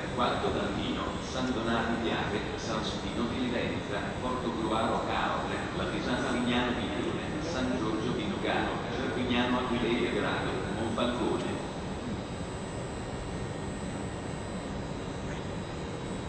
Cannaregio, Venice, Włochy - St.Lucia railway station (binaural)
Binaural recording from platform 11
OLYMPUS LS-100